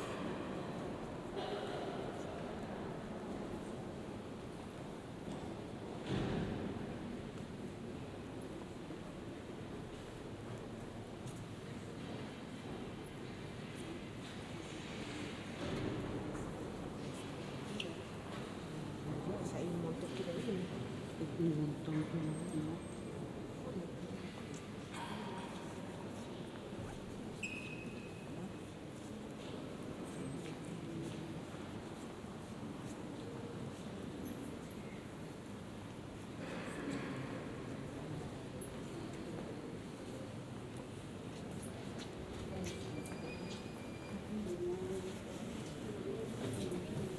Binaural soundwalk in Basilica di San Marco.
OLYMPUS LS-100